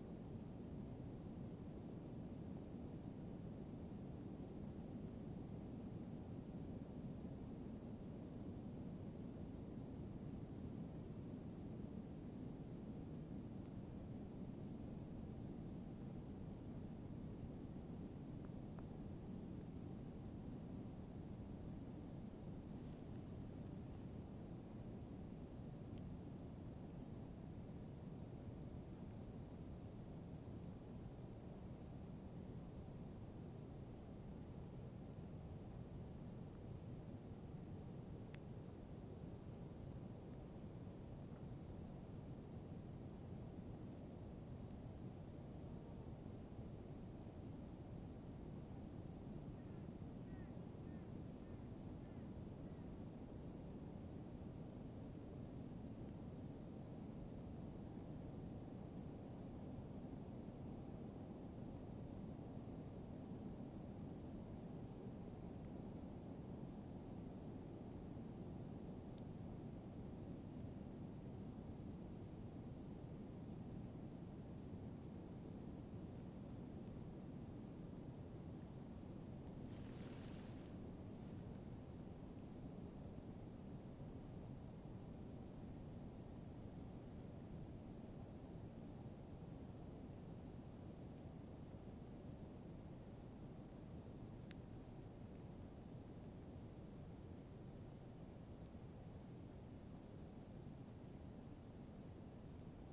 Seno Almirantazgo, Magallanes y la Antártica Chilena, Chile - storm log - distancia
distant waterfall (9,5km) across seno almirantazgo, no wind, ZOOM F1, XYH-6 cap
Unusual calm and clear day at the Almirantazgo Fjord. The waterfall on the other side of the Fjord was almost the only sourche of noise, faint, distant.